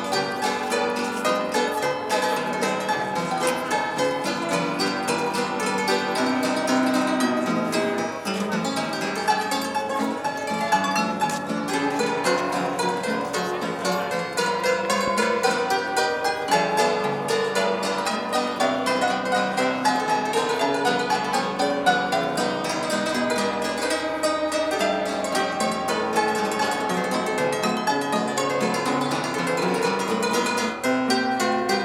street musician at the Pont Saint-Louis, near Notre-Dame cathedral.
Paris, France, 30 October 2011